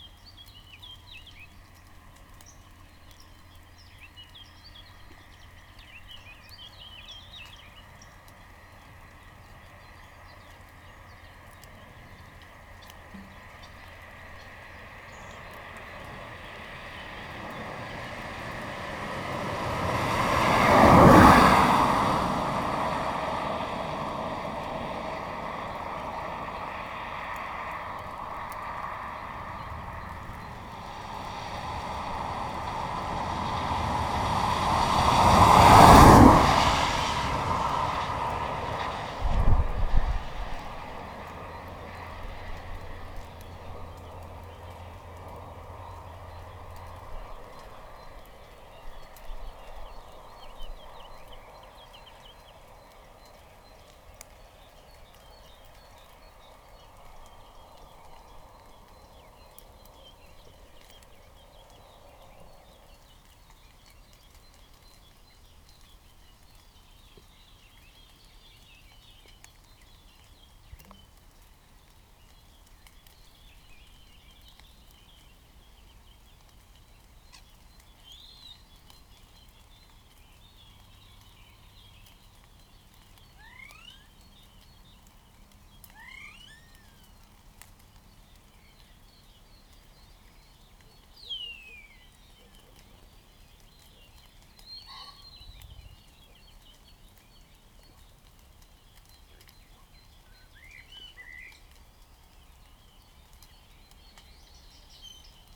Ellend, Magyarország - Waterdrops from willows with cars passing
listening to waterdrops falling from willow trees in the morning, while two cars are passing by.